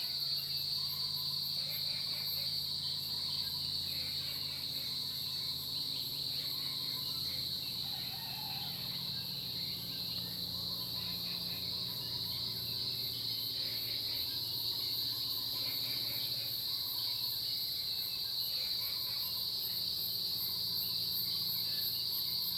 綠屋民宿, 埔里鎮桃米里 - In the morning
In the morning, Bird calls, Crowing sounds, Cicadas cry, Frog calls
Zoom H2n MS+XY
Nantou County, Taiwan, June 2015